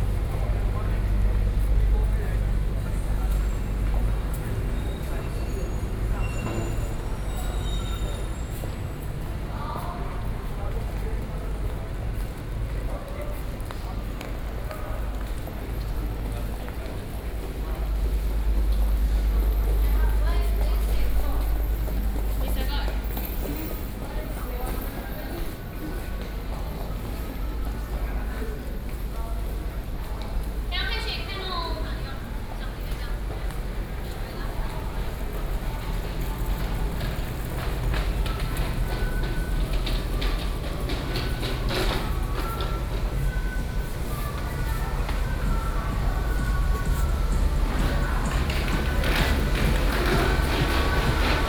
萬華, Taipei City, Taiwan - Underground street